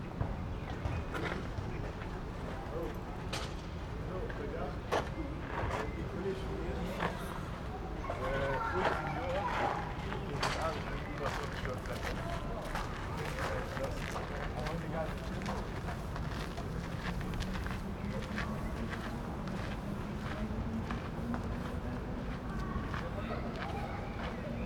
Pl. de la Résistance, Esch-sur-Alzette, Luxemburg - evening ambience
spring evening ambience at Place de la Resistance
(Sony PCM D50)
Canton Esch-sur-Alzette, Lëtzebuerg, 11 May 2022, 21:25